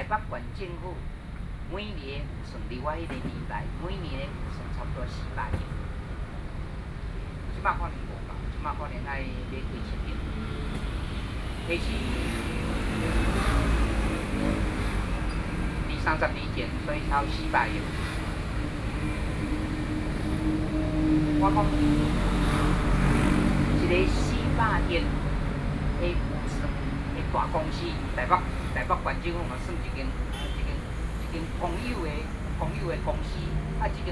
Sitting in front of the Legislative Yuan and protesters Civic Forum, Sony PCM D50 + Soundman OKM II
台北市 (Taipei City), 中華民國, 26 May 2013